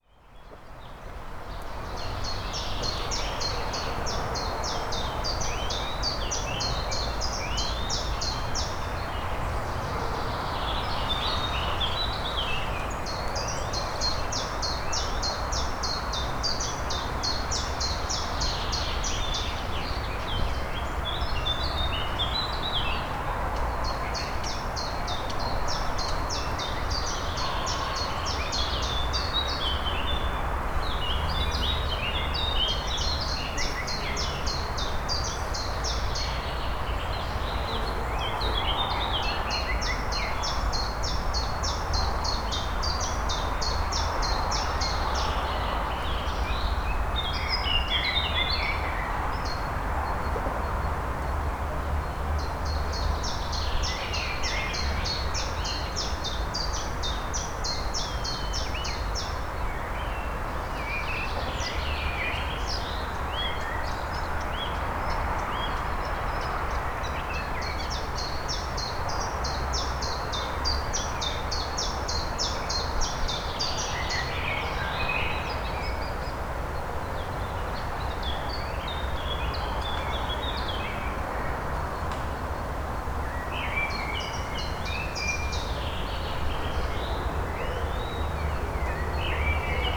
Poznan, borderline of Poznan - hidden pond
afternoon ambience at a small pond hidden in a nearby groove. hum of traffic on a north exit road out of Poznan.
Poznan, Poland, 1 May 2014